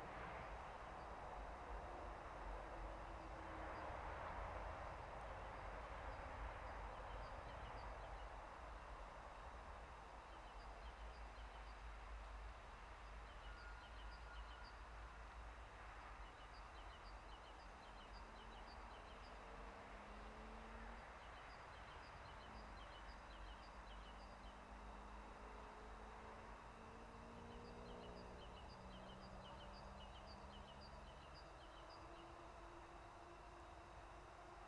Birds singing, natural gas reduction station drone, cars droning in the distance, passenger train passing by to enter Koprivnica train station. Recorded with Zoom H2n (MS, on a tripod).